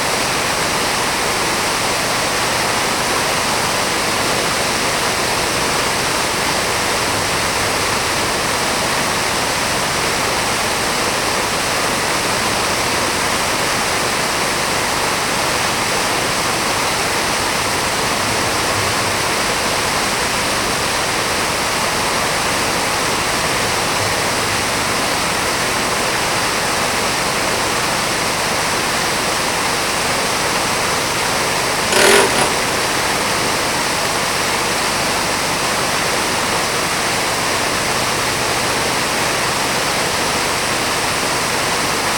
Orléans, fontaine Place du Martroi
Fontaine de droite à la place du Martroi, Orléans (45 - France)
16 May 2011, 11:14